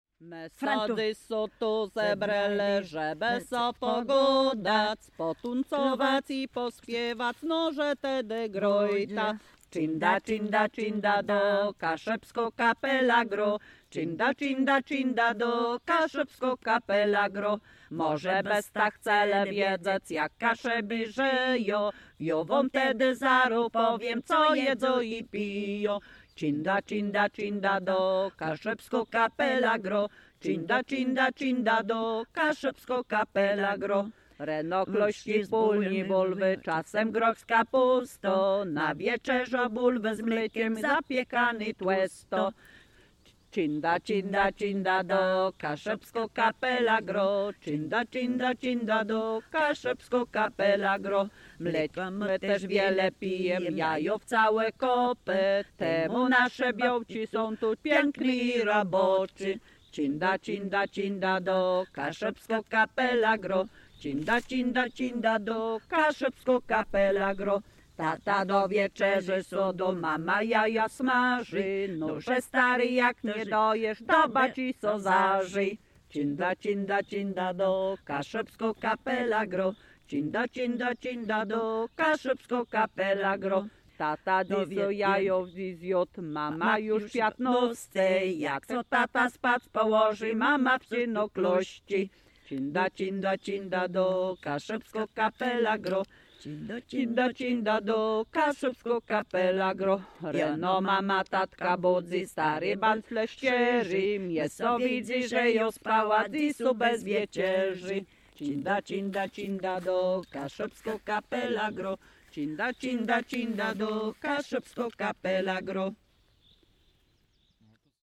Loryniec - Piosenka Czindo-czindo
Nagrania z badań w ramach projektu : "Dźwiękohistorie. Badania nad pamięcią dźwiękową Kaszubów".